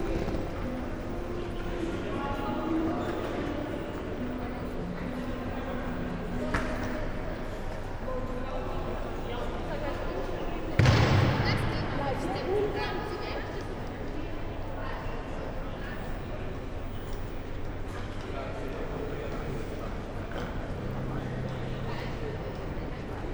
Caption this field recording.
Kattowitz, Katowice Dworzec, man station, hall ambience at night, people exercising on a public piano, (Sony PCM D50, Primo EM172)